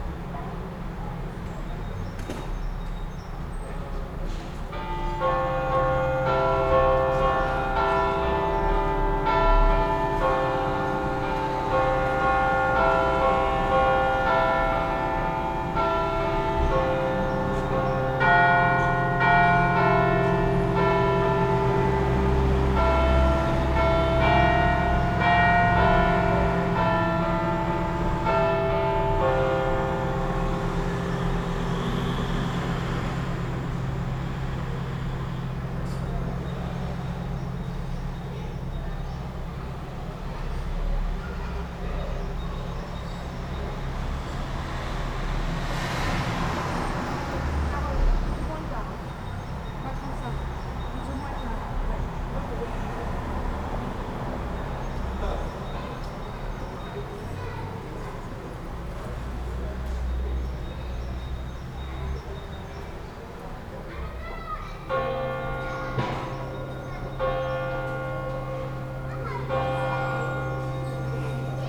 Vaise, Lyon, France - Cloches de l'église de l'Annonciation
Volées de cloches, place de Paris, dimanche à 11 heures, enregistrées de ma fenêtre